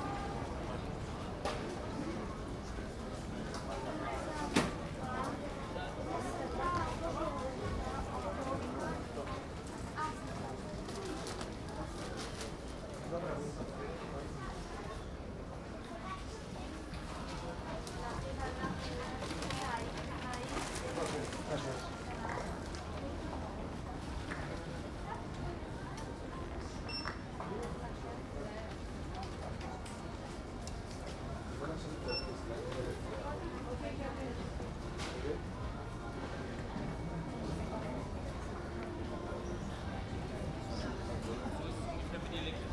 {"title": "Barcelona Airport (BCN), El Prat de Llobregat, Provinz Barcelona, Spanien - airport atmosphere: people near transport band and speakers", "date": "2014-04-21 10:08:00", "description": "TASCAM DR-100mkII with internal Mics", "latitude": "41.31", "longitude": "2.08", "altitude": "5", "timezone": "Europe/Madrid"}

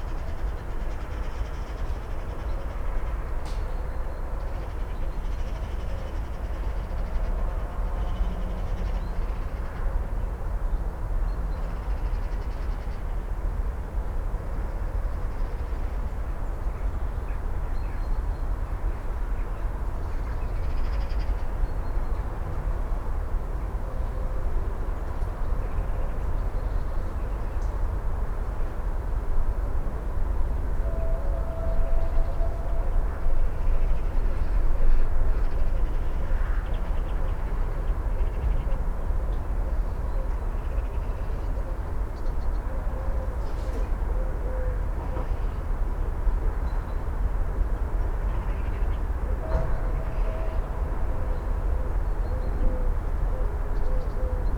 {"title": "Teofila Mateckiego, balcony - fox chase", "date": "2020-01-18 09:05:00", "description": "first 3 or so minutes - two male foxes chasing each other on a field, fighting over a female. at some point they got tired for a while and situation on the field got quiet. scared deer got back to nibbling dead leaves, wild hogs laid down. sound of the morning city, coffee making, usual traffic. (roland r-07)", "latitude": "52.46", "longitude": "16.90", "altitude": "96", "timezone": "Europe/Warsaw"}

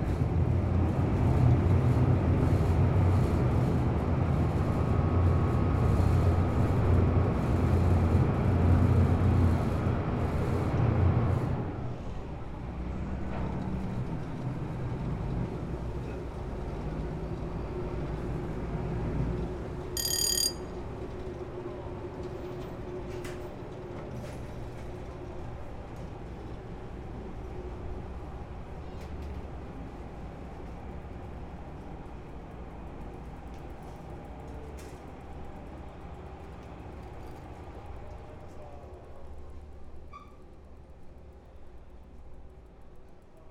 {"title": "Japan, Fukuoka, Kitakyushu, Wakamatsu Ward, Honmachi, 若松渡場 - Noisy Ferry Crossing", "date": "2021-05-14 09:58:00", "description": "A short passenger ferry crossing from Wakamatsu to Tobata.", "latitude": "33.90", "longitude": "130.81", "altitude": "7", "timezone": "Asia/Tokyo"}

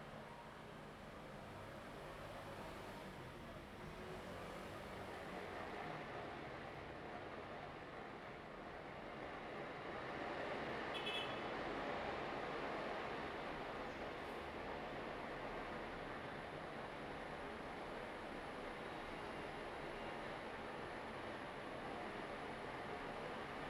{"title": "Daren St., Tamsui District - Firecrackers and Fireworks sound", "date": "2015-05-22 19:28:00", "description": "Firecrackers and Fireworks sound, Traditional festival parade\nZoom H2n MS+XY", "latitude": "25.18", "longitude": "121.44", "altitude": "45", "timezone": "Asia/Taipei"}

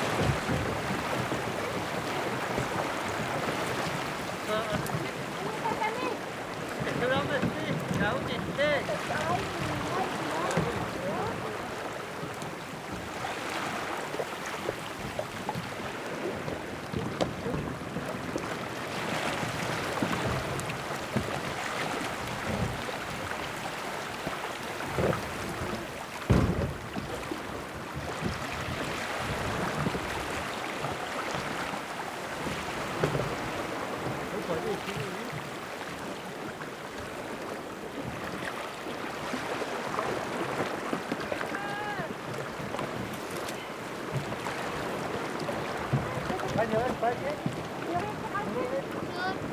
{"title": "Oqaluffiup Aqq., Ilulissat, Groenland - sea dog man", "date": "2001-06-20 14:14:00", "description": "rec near the Zion Baptist church on the waterfront. you can hear the sound of water, dogs and a man minding his little boat", "latitude": "69.22", "longitude": "-51.11", "altitude": "3", "timezone": "America/Godthab"}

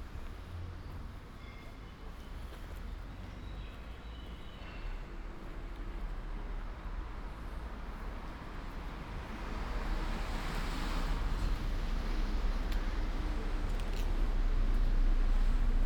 {"title": "Ascolto il tuo cuore, città, Chapter LXXVIII - “Walk to outdoor market on Saturday in the time of covid19” Soundwalk", "date": "2020-05-16 11:57:00", "description": "“Walk to outdoor market on Saturday in the time of covid19” Soundwalk\nChapter LXXVIII of Ascolto il tuo cuore, città. I listen to your heart, city.\nSaturday May 16th 2020. Walk in the open-door square market at Piazza Madama Cristina, district of San Salvario, Turin, sixty seven days after (but day thirteen of Phase II) emergency disposition due to the epidemic of COVID19.\nStart at 11:57 a.m., end at h. 00:24 p.m. duration of recording 26’42”\nThe entire path is associated with a synchronized GPS track recorded in the (kml, gpx, kmz) files downloadable here:", "latitude": "45.06", "longitude": "7.69", "altitude": "243", "timezone": "Europe/Rome"}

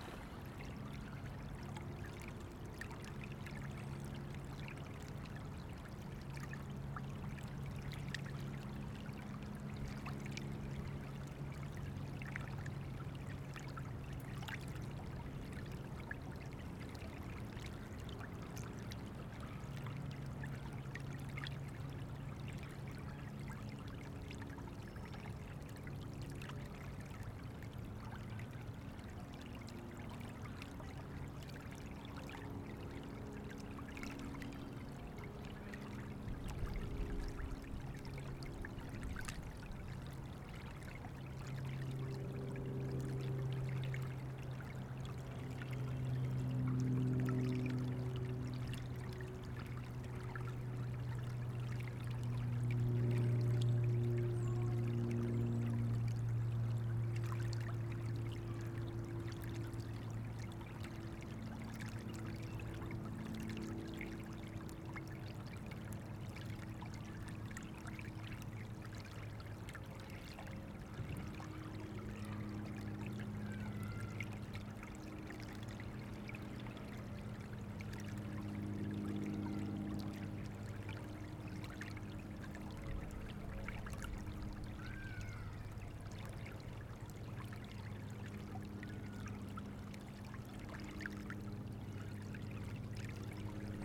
{"title": "Heman Park, University City, Missouri, USA - Heman North Bank", "date": "2022-04-16 10:39:00", "description": "Heman Park north bank of River Des Peres", "latitude": "38.67", "longitude": "-90.32", "altitude": "155", "timezone": "America/Chicago"}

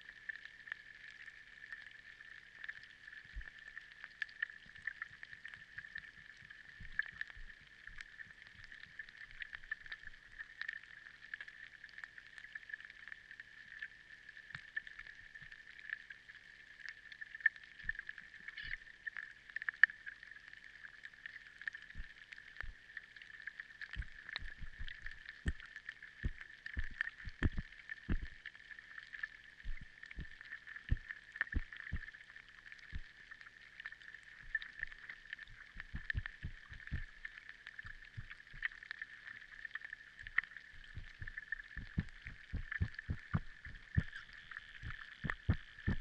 {"title": "Bistrampolis, Lithuania, underwater", "date": "2016-07-31 17:30:00", "description": "hydrophone recording in the pond", "latitude": "55.60", "longitude": "24.36", "altitude": "66", "timezone": "Europe/Vilnius"}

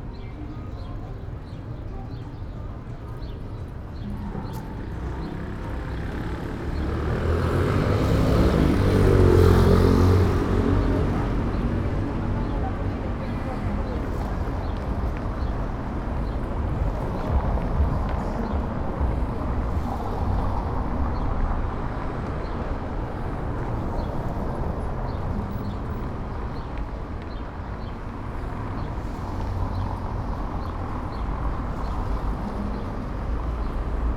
{"title": "C. Francisco I. Madero, Centro, León, Gto., Mexico - En las mesas de la parte de afuera de la nevería Santa Clara.", "date": "2022-03-29 18:14:00", "description": "At the tables outside the Santa Clara ice cream parlor.\nI made this recording on march 29th, 2022, at 6:14 p.m.\nI used a Tascam DR-05X with its built-in microphones and a Tascam WS-11 windshield.\nOriginal Recording:\nType: Stereo\nEsta grabación la hice el 29 de marzo de 2022 a las 18:14 horas.", "latitude": "21.12", "longitude": "-101.68", "altitude": "1806", "timezone": "America/Mexico_City"}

